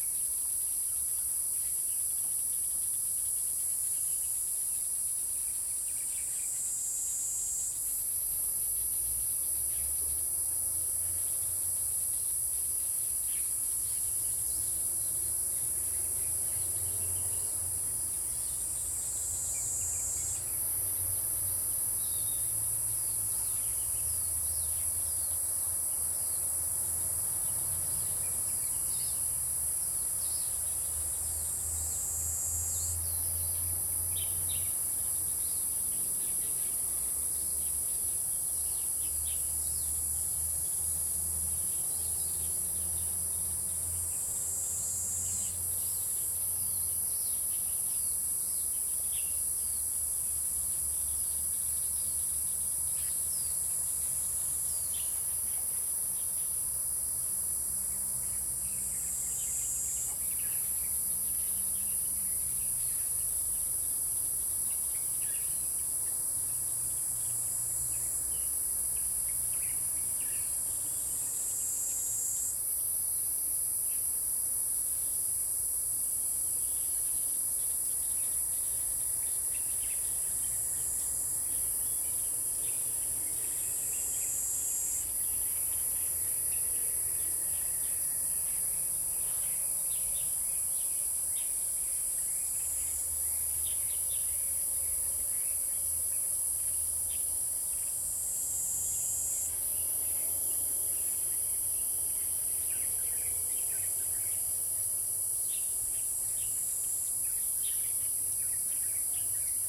組合屋生態池, 埔里鎮桃米里 - Bird calls
Birds singing, Next to the ecological pool
Zoom H2n MS+XY
11 August, 07:12